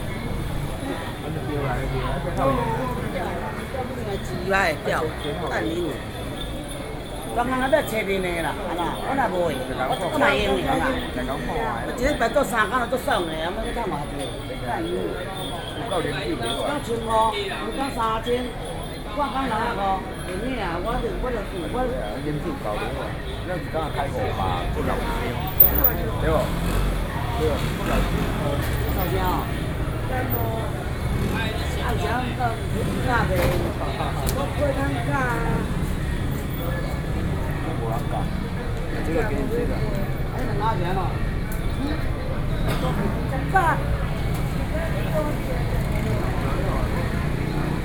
Sec., Guiyang St., Wanhua Dist., Taipei City - Traditional temple festivals
Men and women are quarrel, Traditional temple